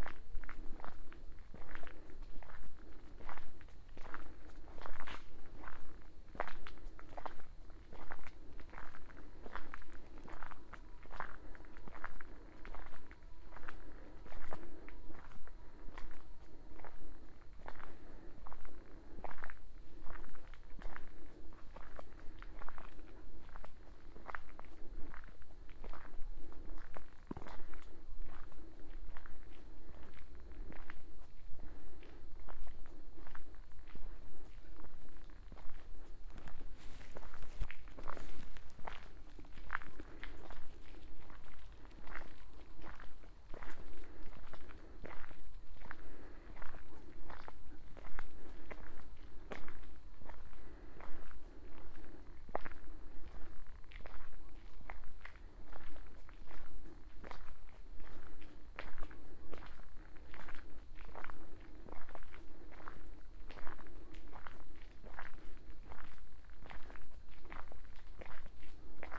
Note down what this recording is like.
“Sunsetsound 2020, Levice” a soundwalk in four movements. Soundwalk in four movements realized in the frame of the project Sunsetsound. Movements 1 & 2: Levice, CN, Italy, Saturday, September 5th, 2020: First movements: start at 5:23 p.m. end at 6:01 p.m. duration 35’29”, Second movement: start at 6:33 p.m. end at 7:21 p.m. duration 48’02”, Total duration of recording: 01:23:05, Movement 3&4: same path as Movements 1&2, Monday December 21st at Winter solstice (for this place solstice will happen at 10:02 a.am.). Third movement: start at 9:11 end at 10:06, total duration 55’ 13”, Fourth movement: start at 10:07 end at 11:03, total duration 55’ 39”, As binaural recording is suggested headphones listening. All paths are associated with synchronized GPS track recorded in the (kmz, kml, gpx) files downloadable here: first path/movement: second path/movement: third& fourth path/movement: